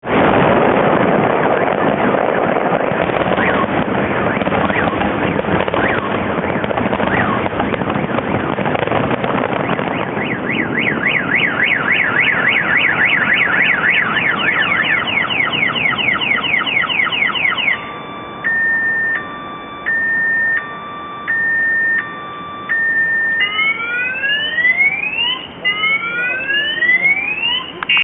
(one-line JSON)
{"title": "Veliky Novgorod - Car Alarm", "date": "2009-06-21 23:00:00", "description": "Fireworks setting of car alarms at night on the streets of Novgorod, Russia.", "latitude": "58.53", "longitude": "31.28", "altitude": "31", "timezone": "Europe/Moscow"}